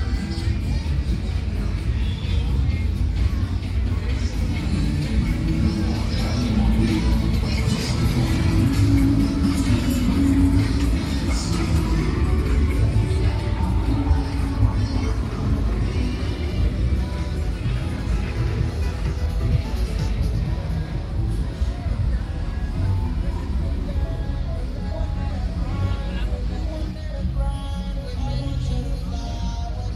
{
  "title": "Lunapark at Holešovice",
  "description": "Soundwalk at the lunapark, where each spring a folk fair takes place.",
  "latitude": "50.11",
  "longitude": "14.43",
  "altitude": "185",
  "timezone": "Europe/Berlin"
}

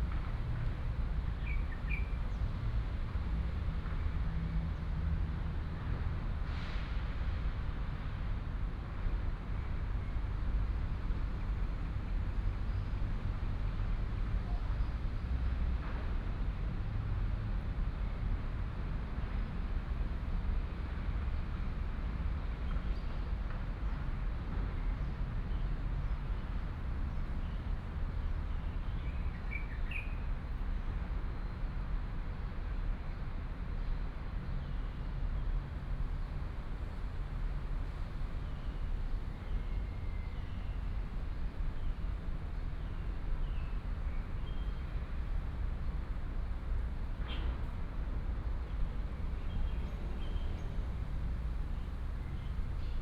{
  "title": "成功光影公園, Zhubei City - in the Park",
  "date": "2017-05-07 13:32:00",
  "description": "construction sound, Traffic sound, sound of the birds",
  "latitude": "24.82",
  "longitude": "121.03",
  "altitude": "35",
  "timezone": "Asia/Taipei"
}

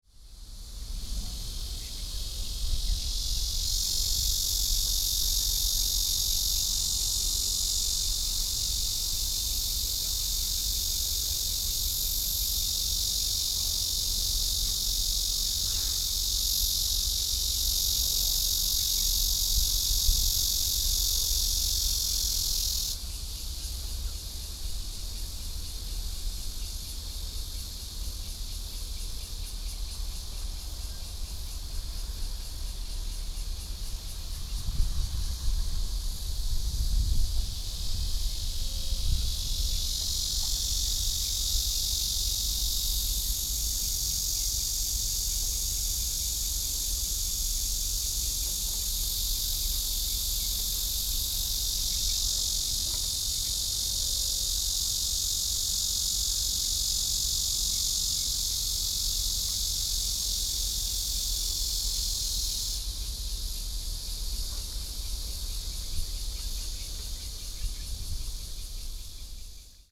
{
  "title": "Sec., Zhongshan Rd., Bali Dist., New Taipei City - Birds and Cicada Sound",
  "date": "2012-07-04 13:05:00",
  "description": "Cicada sounds, Birds singing\nSony PCM D50",
  "latitude": "25.15",
  "longitude": "121.40",
  "altitude": "7",
  "timezone": "Asia/Taipei"
}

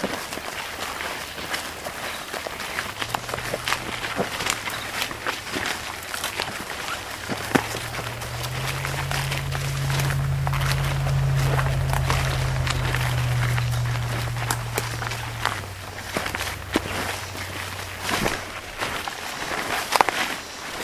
{
  "title": "Kentucky, USA - The Ground Beneath My Feet in Summer (WLD 2017)",
  "date": "2017-07-18 15:04:00",
  "description": "Sounds from hike through small patch of deciduous forest, adjacent to stream and rural road. Recorded mid-afternoon on hot, humid summer day. Among species heard: field sparrow (Spizella pusilla), Cope's gray tree frog (Hyla chrysoscelis). Sony ICD-PX312.",
  "latitude": "37.86",
  "longitude": "-85.00",
  "altitude": "235",
  "timezone": "America/New_York"
}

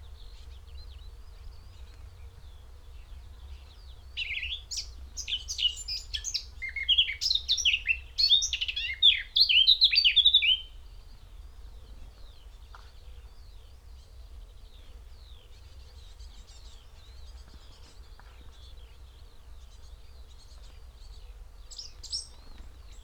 {
  "title": "Malton, UK - blackcap song ...",
  "date": "2021-06-27 06:41:00",
  "description": "blackcap song ... xlr sass on tripod to zoom h5 ... bird calls ... songs ... from ... wood pigeon ... dunnock ... yellowhammer ... great tit ... skylark ... chaffinch ... extended unattended time edited recording ...",
  "latitude": "54.14",
  "longitude": "-0.55",
  "altitude": "126",
  "timezone": "Europe/London"
}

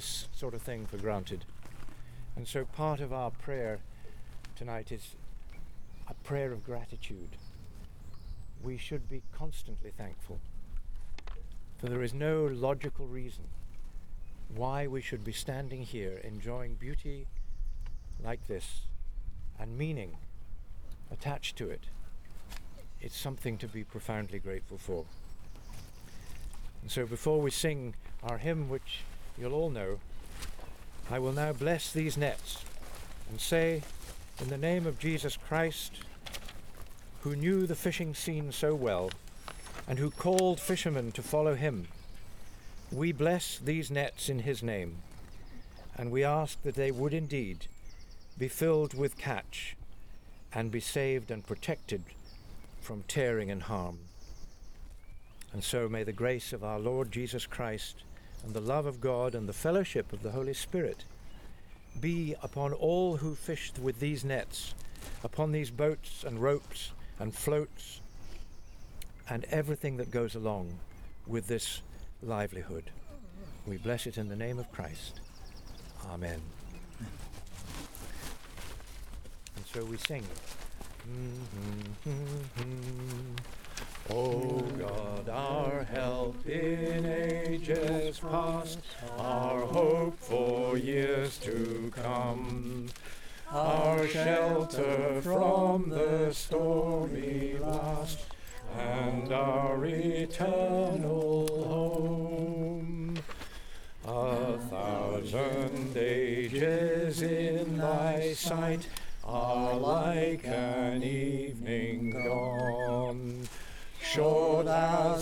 {
  "title": "Paxton, Scottish Borders, UK - River Sounds - Blessing of the Nets, Paxton",
  "date": "2013-05-01 18:10:00",
  "description": "Field recording of the traditional Blessing of the Nets ceremony that took place on the banks of the Tweed at Paxton on May 1st 2013.\nThe Paxton netting station is one of the very few fisheries still operating this traditional net and cobble method of salmon and trout fishing.\nThe first catch that evening was of two large and one smaller sea trout. The first fish is always for the Minister and this was gutted and cleaned on the river side by George Purvis.\nThanks to the Minister Bill Landale, for permitting this recording and to Martha Andrews, Paxton House, for inviting us along.",
  "latitude": "55.76",
  "longitude": "-2.10",
  "timezone": "Europe/London"
}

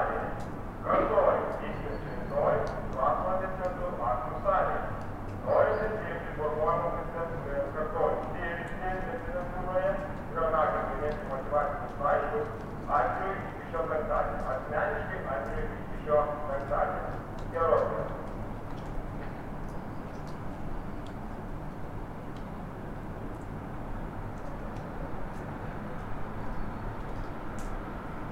{
  "title": "Lithuania, Vilnius, a school radio",
  "date": "2012-11-05 13:10:00",
  "description": "some near school radio translation heard in abandoned building in forest",
  "latitude": "54.68",
  "longitude": "25.30",
  "altitude": "128",
  "timezone": "Europe/Vilnius"
}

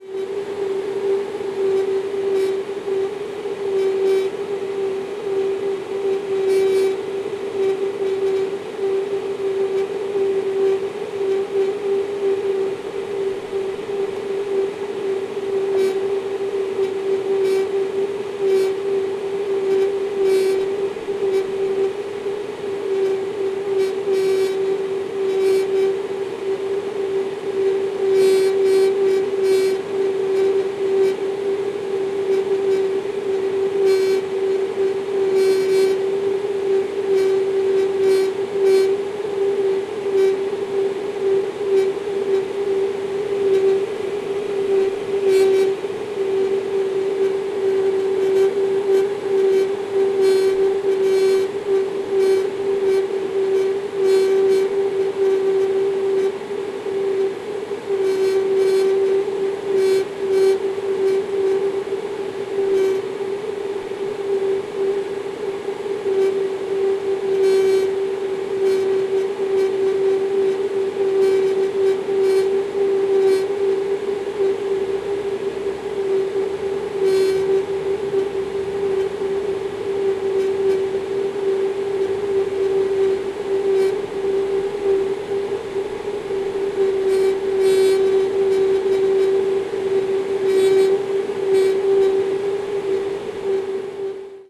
{
  "title": "Costa do Castelo, Lisbon, Portugal - Car park air conditioner metal Grill",
  "date": "2012-05-16 21:34:00",
  "description": "Metal grill resonating on air passage from a car park",
  "latitude": "38.71",
  "longitude": "-9.14",
  "altitude": "54",
  "timezone": "Europe/Lisbon"
}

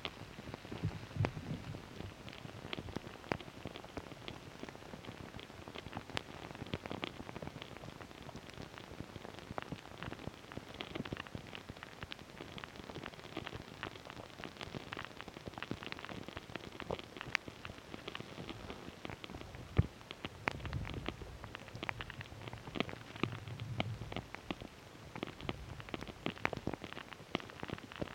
{
  "title": "Sirutėnai, Lithuania, melting snow",
  "date": "2022-03-14 17:10:00",
  "description": "Noises of the melting snow in the sun. Contact microphones.",
  "latitude": "55.55",
  "longitude": "25.59",
  "altitude": "116",
  "timezone": "Europe/Vilnius"
}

